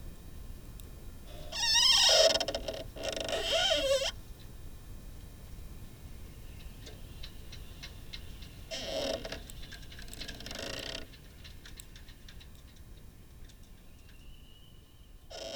workum, het zool: marina, berth h - the city, the country & me: marina, sailing yacht, plastic box
contact mic on plastic box
the city, the country & me: july 9, 2011